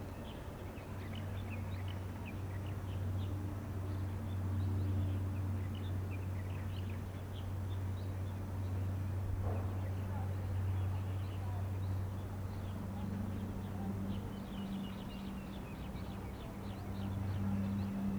Xiaocukeng, Pinglin Dist., New Taipei City - a small mountain road
Next to a small mountain road, Insects sounds, traffic sound, The sound of water droplets
Sony PCM D50
Xindian District, 小粗坑路, 21 February 2012